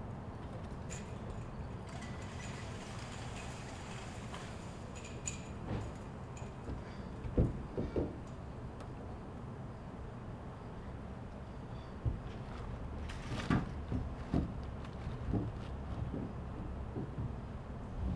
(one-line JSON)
{"date": "2018-06-29 07:49:00", "description": "eka joins us near the end of the recording and we continue our errand running stardom", "latitude": "35.66", "longitude": "-105.99", "altitude": "2046", "timezone": "America/Denver"}